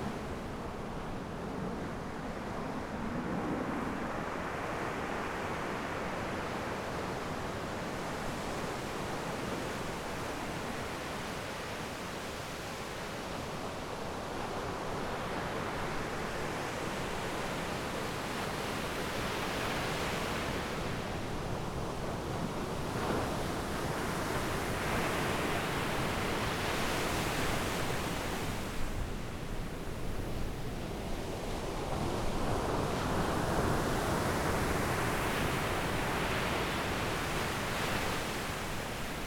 At the beach, Sound of the waves, Zoom H6 M/S, Rode NT4